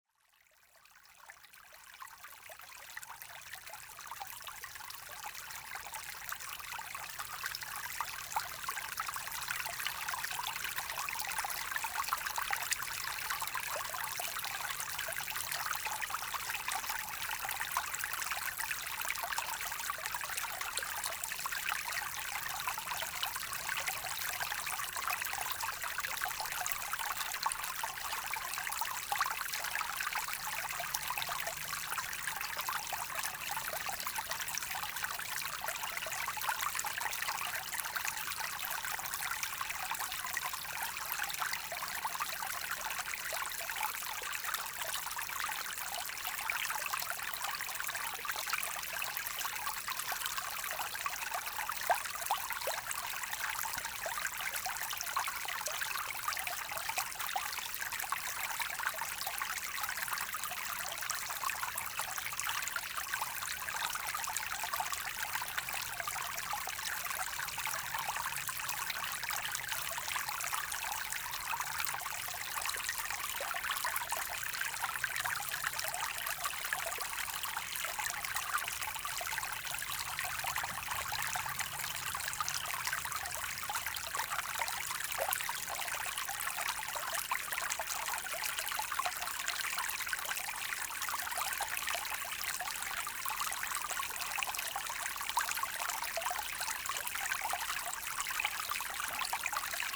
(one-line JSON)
{"title": "Chanceaux, France - Seine river", "date": "2017-07-29 17:30:00", "description": "A few kilometers after the spring, after being a stream, now the Seine is a very small river, flowing gaily in the pastures.", "latitude": "47.52", "longitude": "4.71", "altitude": "397", "timezone": "Europe/Paris"}